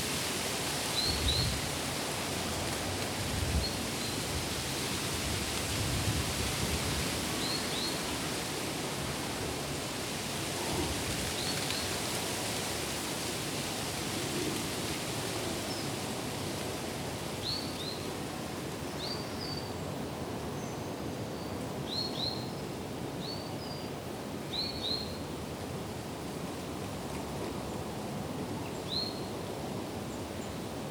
Hill Side, Lancaster, UK - Lancaster Priory Churchyard
An early morning meditation in the grounds of Lancaster Priory. Recorded with the coincident pair of built-in microphones on a Tascam DR-40 (with windshield on and 75Hz low cut).